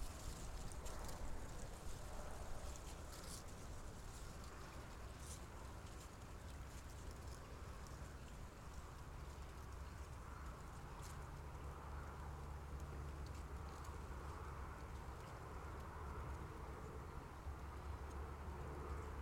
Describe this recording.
a soundscape. distant traffic, close dried grass and some occasional shooting